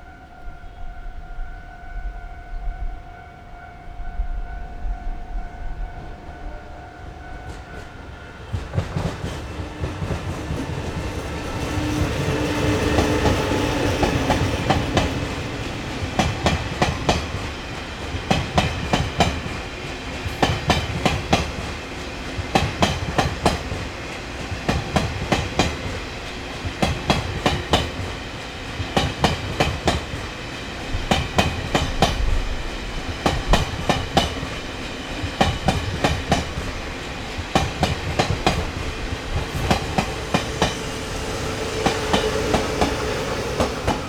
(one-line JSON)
{"title": "Fongshan, Kaohsiung - Beside the railroad", "date": "2012-03-17 13:46:00", "latitude": "22.63", "longitude": "120.36", "altitude": "10", "timezone": "Asia/Taipei"}